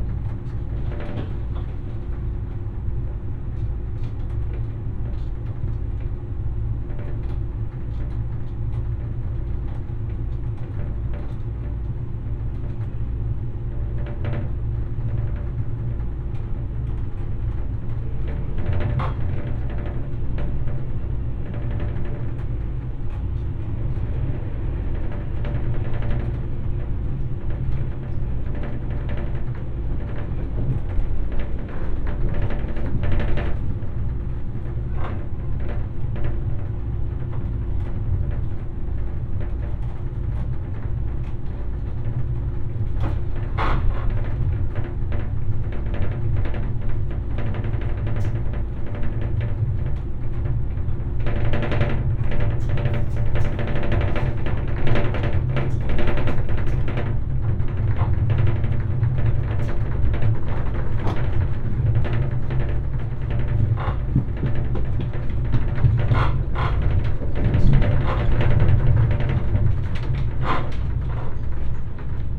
in a train near Hanau, Deutschland - drones and rattling things
in an ICE train from Berlin to Frankfurt, multiple things rattling and vibrating, engine drones. (Olympus LS5, EM172 binaurals)
21 January, 16:00